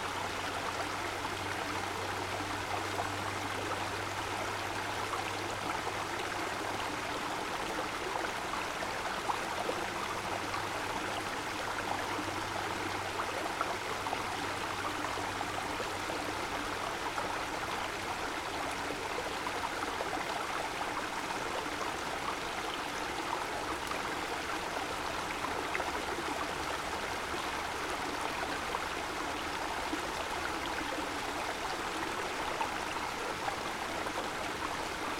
C. Segunda, Real Sitio de San Ildefonso, Segovia, España - Cascada del Arroyo de la Chorranca
Sonidos del Arroyo de la Chorranca en Valsaín. El arroyo pasa por una zona rocosa en donde con el paso del tiempo, el agua ha ido esculpiendo las rocas formando unas pequeñas pocitas y cascadas a su bajada, se llega adentrándose un poco fuera de la senda entre los pinares de Valsaín. Se sitúa muy cerca de una ruta llamada Sendero de los Reales Sitios creada en el siglo XVIII por el rey Carlos III. Esta ruta llega hasta el Palacio de la Granja de San Idelfonso. Toda la zona es muy natural y preciosa. Al caer el sol... grababa lo que escuchaban mis oídos...
Castilla y León, España, 18 August 2021